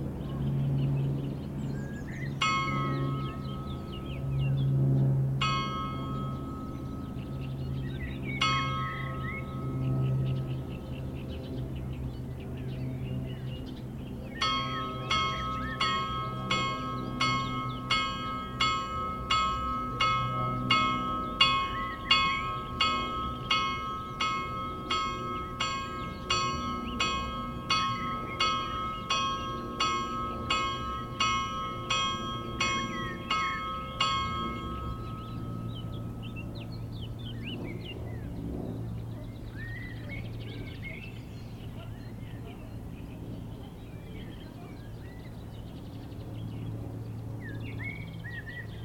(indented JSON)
{
  "title": "Les Platières, Conjux, France - Clocher chapelle",
  "date": "2020-05-30 12:04:00",
  "description": "La sonnerie du clocher de la chapelle de Conjux après les 12 coups de midi. Au cours du tour du lac en vélo.",
  "latitude": "45.79",
  "longitude": "5.82",
  "altitude": "269",
  "timezone": "Europe/Paris"
}